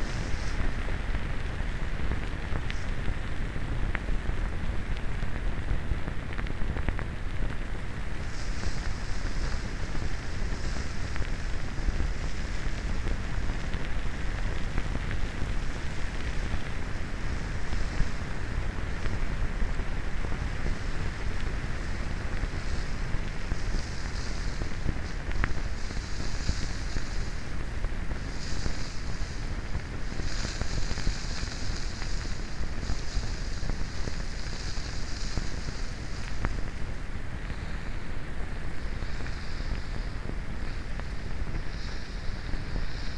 underwater springhead, Utena, Lithuania
underwater springhead in the meadow. dipped my aquarian hydro in it. a lot of sand bruising sounds...
24 March, 16:50